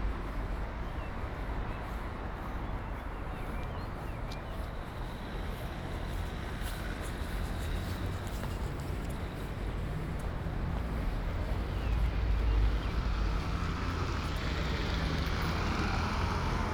Ascolto il tuo cuore, città. I listen to your heart, city. Several chapters **SCROLL DOWN FOR ALL RECORDINGS** - Coucher de soleil au parc Valentino, rive droite du Pô, aux temps du COVID19: soundwalk
Thursday March 19th 2020. San Salvario district Turin, to Valentino, walking on the right side of Po river and back, nine days after emergency disposition due to the epidemic of COVID19.
Start at 6:29 p.m. end at 7:15 p.m. duration of recording 46’08”. Local sunset time 06:43 p.m.
The entire path is associated with a synchronized GPS track recorded in the (kmz, kml, gpx) files downloadable here:
Torino, Piemonte, Italia, March 2020